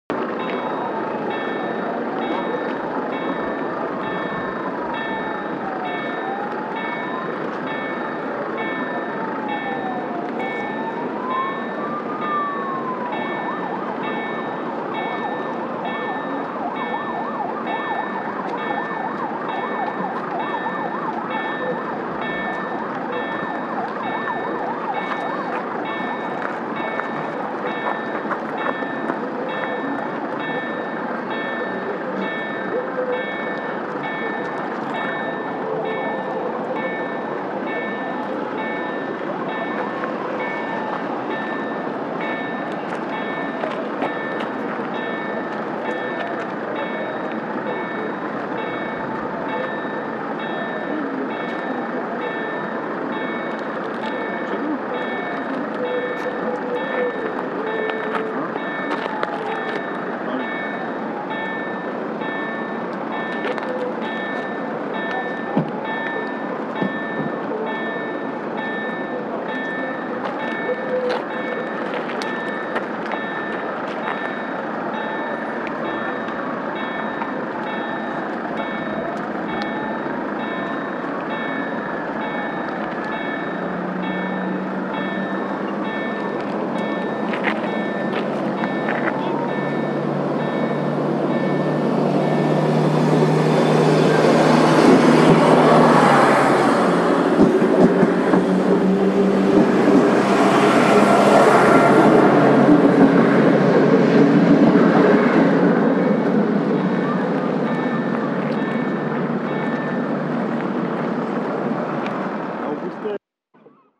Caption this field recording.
A train passes through a rail crossing on its return from Hel.